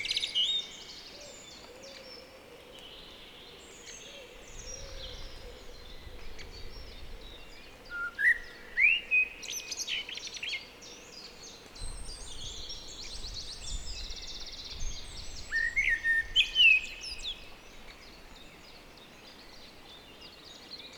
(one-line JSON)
{"title": "Dartington, Devon, UK - soundcamp2015dartington river blackbird one", "date": "2015-05-03 07:37:00", "latitude": "50.46", "longitude": "-3.68", "altitude": "7", "timezone": "Europe/London"}